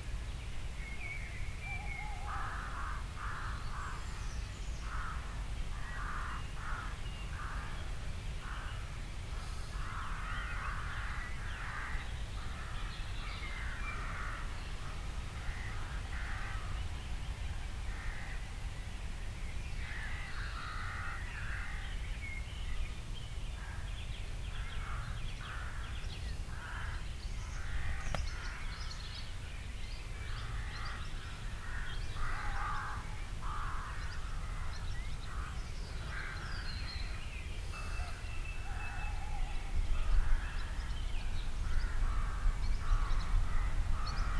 Bishops Sutton, Hampshire, UK - owls and jackdaws
This was made very early in the morning, with me and Mark both dozing in the tent and half-listening to the sounds of the early morning bird life. You can hear an owl a small distance away, our sleepy breathing, and the sound of some jackdaws. All muffled slightly by the tent... recorded with sound professionals binaural mics suspended from the top of the tent and plugged into my edirol r09.